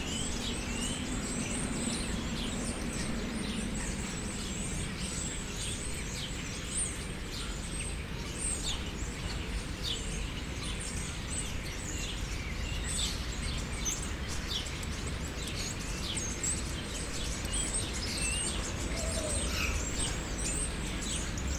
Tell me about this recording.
Stopping in the little woods in the city, birds mixed with the sounds of cars.